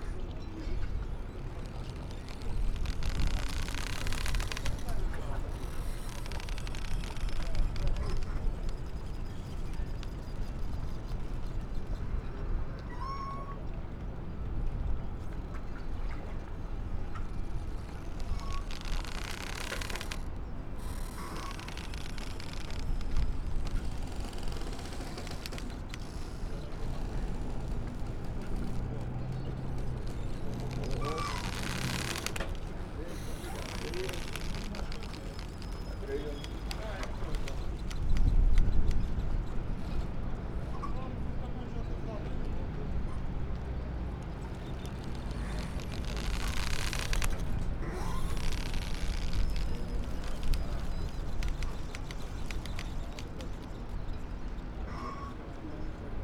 sound of a crunching rope holding a boat to the pier and of yacht hulls rubbing against each other. a catamaran arrives spewing out tourist from dolphin watching trip. the operators say thank you and good bay to the tourists.